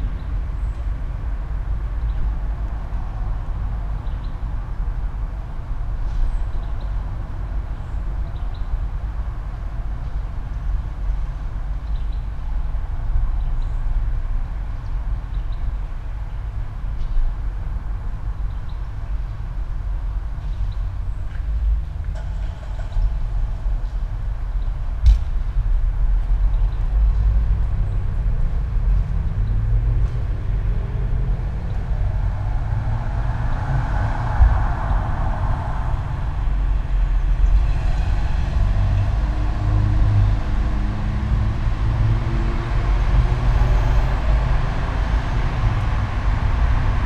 all the mornings of the ... - aug 27 2013 tuesday 08:04
Maribor, Slovenia, 27 August 2013, ~08:00